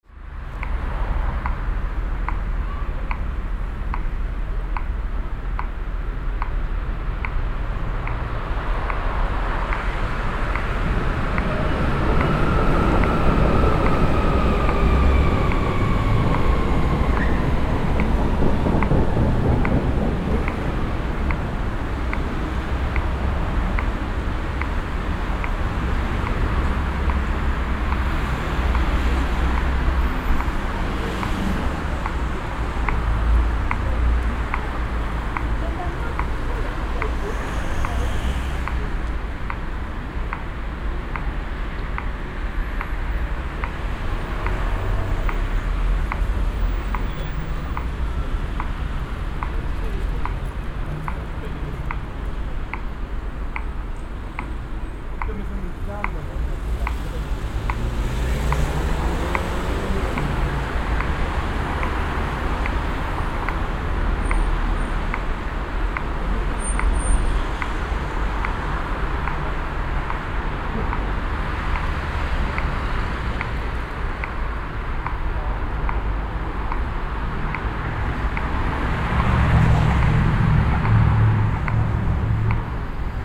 berlin, bülowstr, traffic signs
soundmap d: social ambiences/ listen to the people - in & outdoor nearfield recordings
Berlin, Germany